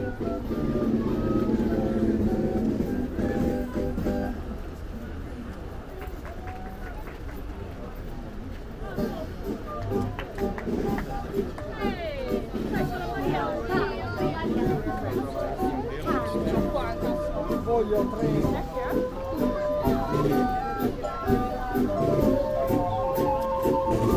charles brigde prague - a walk over charles bridge
Prague, Czech Republic, 9 August 2008, 16:41